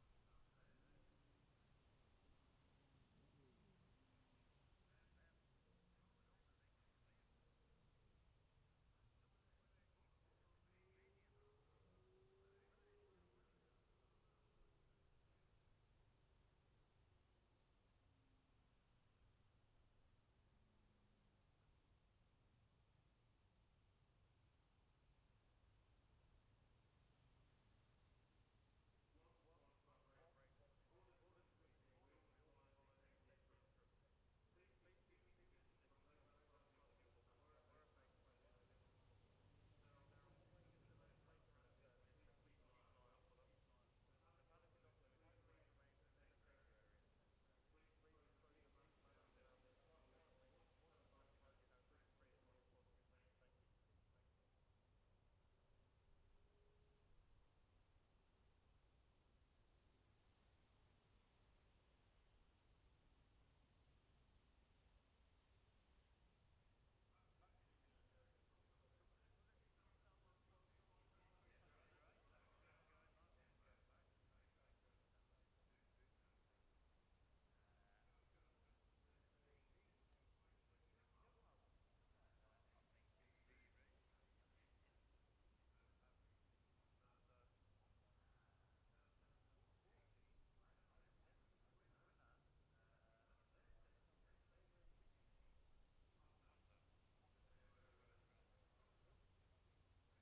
Gold Cup 2020 ... sidecars practice ... Memorial Out ... dpa 4060s to Zoom H5 ...
Scarborough, UK, September 2020